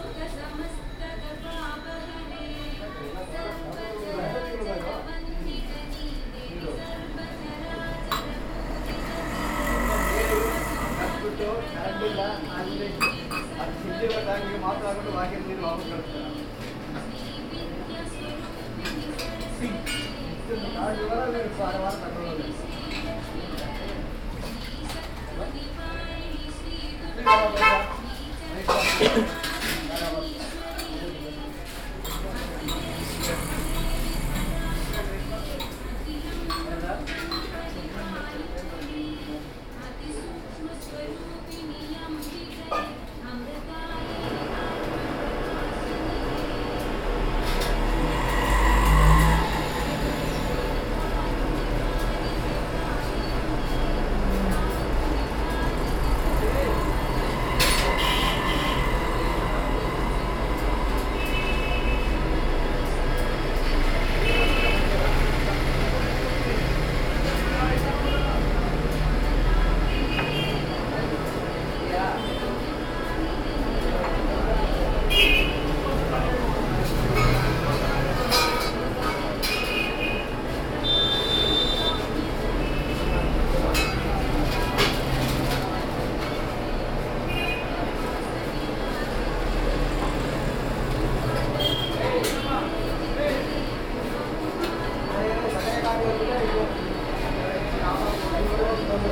Bangalore, Sidda Ln, Chai
India, Karnataka, Bangalore, Snack, Chai, bouiboui